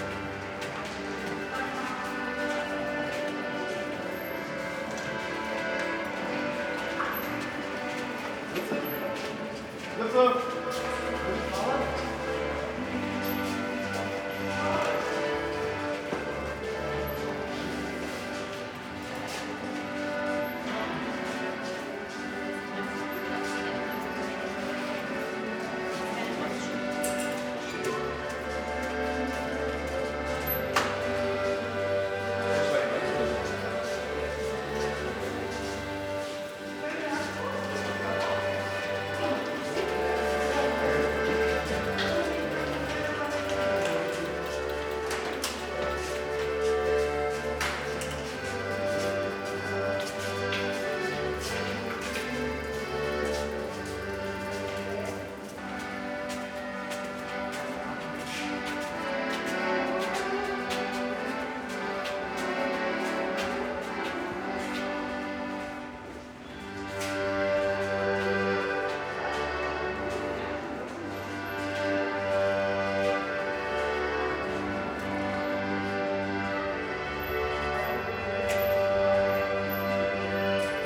Spreetunnel, Berlin Friedrichshagen - accordion player, pedestrians
tunnel below river Spree, pedestrians and cyclists crossing, tunnel ambience, an old man playing the accordion
(Sony PCM D50, Primo EM172)